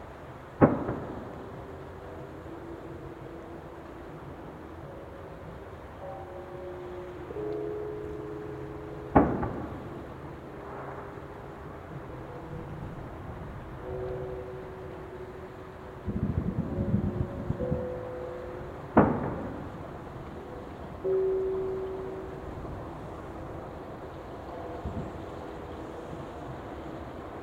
New Year's eve recording in a field in Japan. We can hear temple bells, traffic, trains, and other sounds. At midnight nearby fireworks and a neighbor's firecracker announce the new year. Recorded with an Audio-Technica BP4025 stereo microphone and a Tascam DR-70D recorder mounted on a tripod.
Rittō-shi, Shiga-ken, Japan